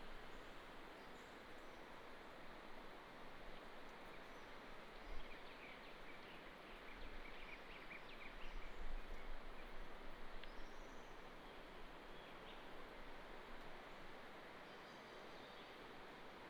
Tuban, 達仁鄉台東縣 - At the edge of the mountain wall
Stream sound, At the edge of the mountain wall, Bird call, Birdsong across the valley
Daren Township, Taitung County, Taiwan, 6 April